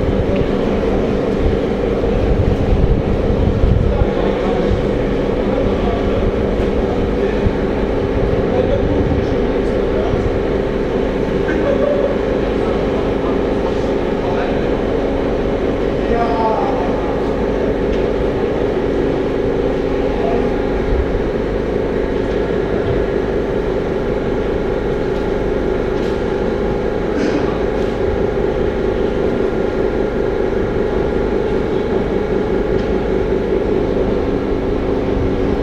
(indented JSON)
{
  "title": "[IIIV+tdr] - Vukov Spomenik, stanica: peron",
  "date": "2011-10-29 17:46:00",
  "latitude": "44.80",
  "longitude": "20.48",
  "altitude": "129",
  "timezone": "Europe/Belgrade"
}